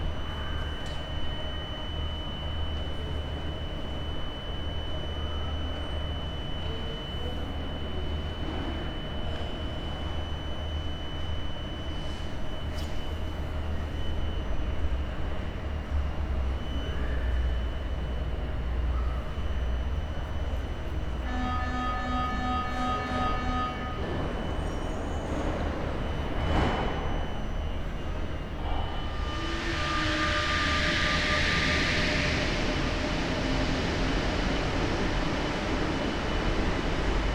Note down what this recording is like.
PAS - Parcours Audoi Sensible, écouter la gare, dedans/dehors, Soundwalk, listening to Station, indoor, outdoor.